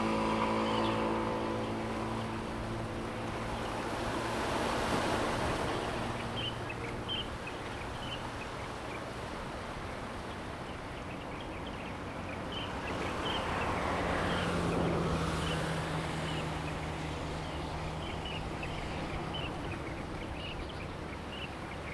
墾丁路, Hengchun Township - on the coast
On the coast, Sound of the waves, Birds sound, traffic sound
Zoom H2n MS+XY
Hengchun Township, Pingtung County, Taiwan, 23 April, ~06:00